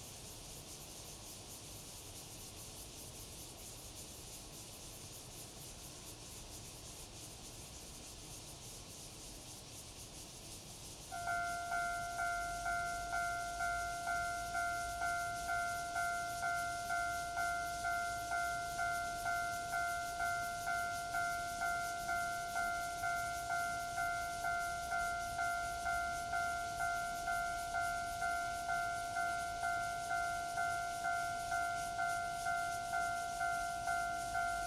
Ln., Puzhong Rd., Zhongli Dist. - The train runs through
Next to the tracks, Cicada cry, Traffic sound, The train runs through
Zoom H2n MS+XY
Zhongli District, Taoyuan City, Taiwan, 28 July, ~06:00